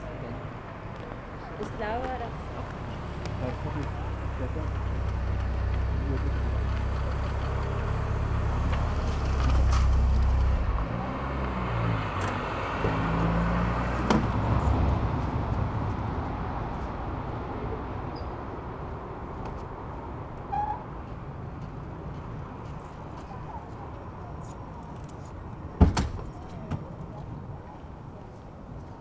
Berlin: Vermessungspunkt Friedelstraße / Maybachufer - Klangvermessung Kreuzkölln ::: 04.06.2010 ::: 00:13